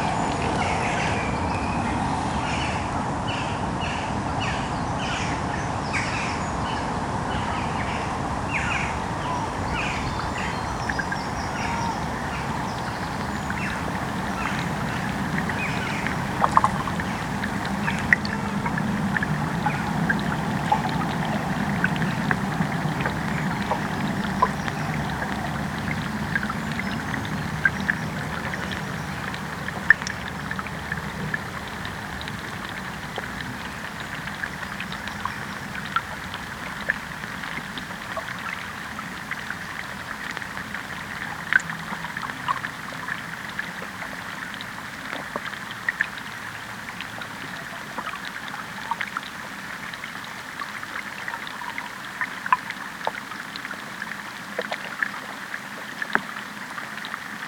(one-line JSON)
{"title": "Old Kilpatrick, Glasgow - The Forth & Clyde Canal 001", "date": "2020-06-21 15:43:00", "description": "3 channel mix with a stereo pair of DPA-4060s and an Aquarian Audio H2A hydrophone. Recorded on a Sound Devices MixPre-3", "latitude": "55.93", "longitude": "-4.47", "altitude": "9", "timezone": "Europe/London"}